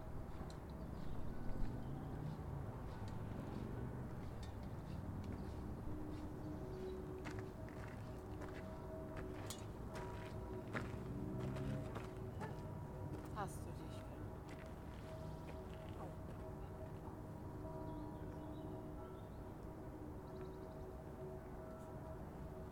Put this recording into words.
Glockenläuten; Schritte im Kies; Vogelstimmen.